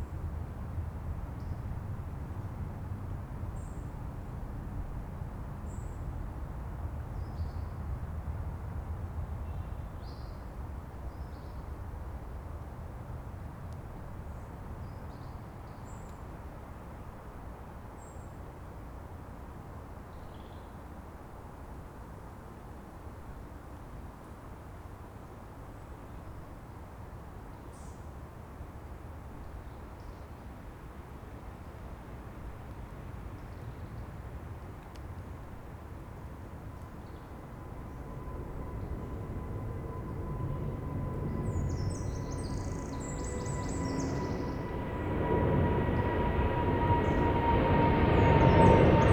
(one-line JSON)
{"title": "Campolide, Portugal - Pedreira da Serafina", "date": "2014-11-05 17:31:00", "description": "Recorded at an old quarry. Serafina.Lisbon.", "latitude": "38.73", "longitude": "-9.18", "altitude": "125", "timezone": "Europe/Lisbon"}